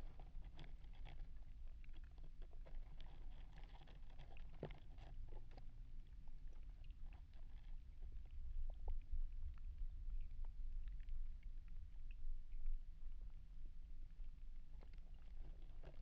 Mic/Recorder: Aquarian H2A / Fostex FR-2LE